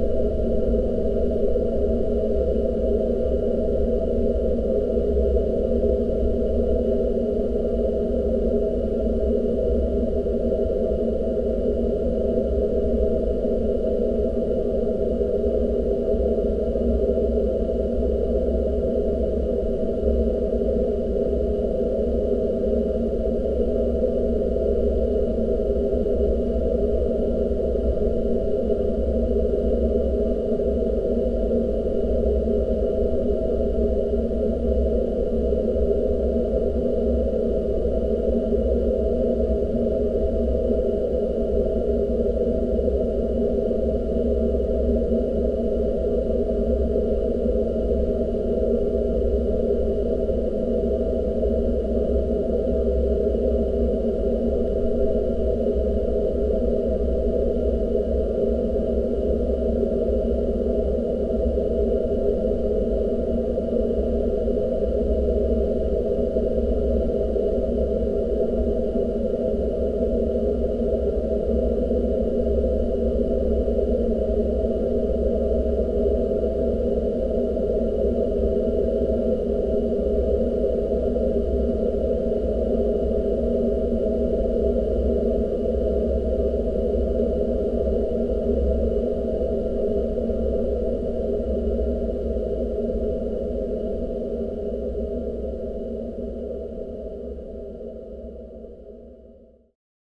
Biliakiemis, Lithuania, dam drone 2
a pair of contact mics and LOM geophone on a fence of the dam